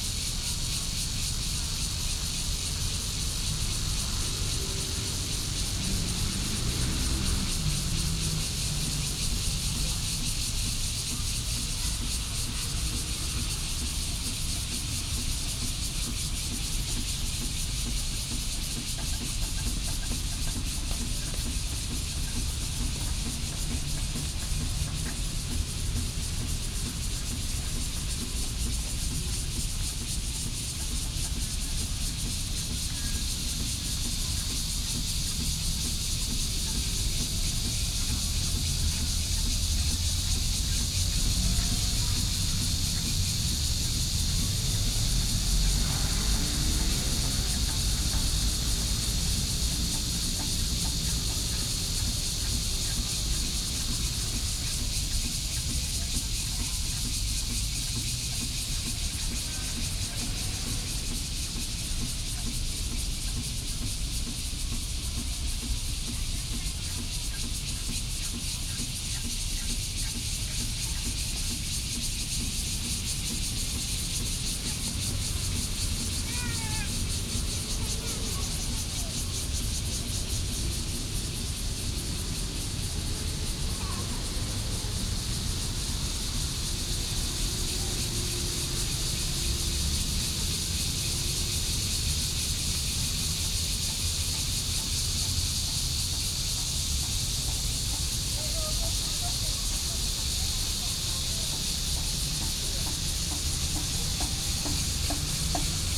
北投區豐年公園, Taipei City - Cicadas sound
In the Park, Traffic Sound, Cicadas sound
Sony PCM D50+ Soundman OKM II